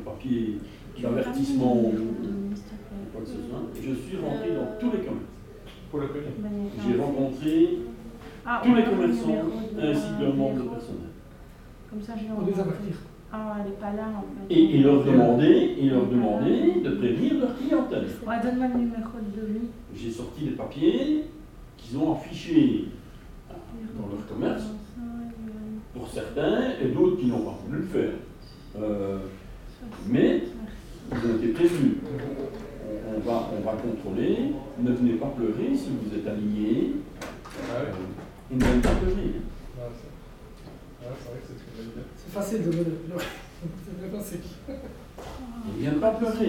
Seraing, Belgique - Police school
A time between two courses, policemen are talking about their job.
Seraing, Belgium, 24 November 2015